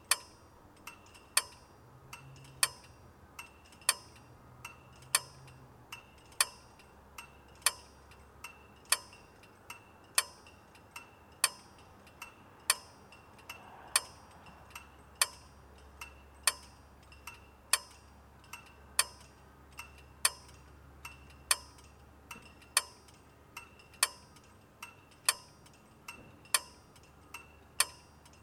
Mildura, VIC, Australia - Tinkling traffic lights at night
Recorded with an Olympus LS-5.